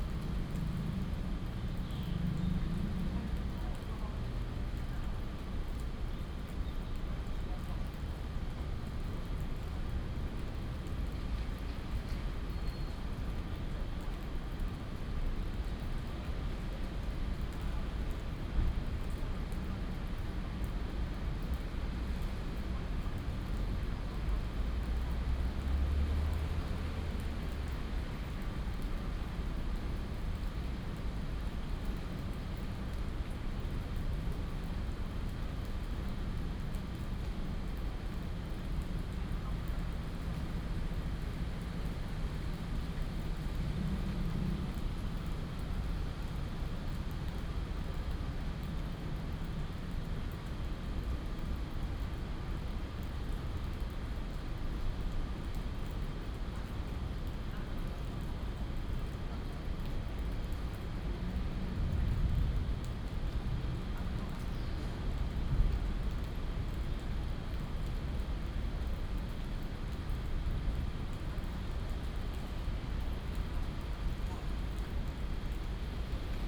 安祥公園, Da’an Dist., Taipei City - in the Park
in the Park, Bird calls, Raindrop sound, Thunder, Thunderstorm coming to an end